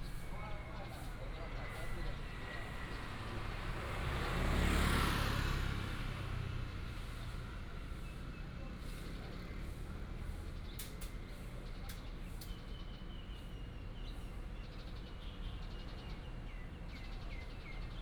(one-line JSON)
{"title": "彌陀公園, Kaohsiung City - Next to the park", "date": "2018-05-07 14:52:00", "description": "Next to the park, Traffic sound, Bird sound\nBinaural recordings, Sony PCM D100+ Soundman OKM II", "latitude": "22.79", "longitude": "120.25", "altitude": "7", "timezone": "Asia/Taipei"}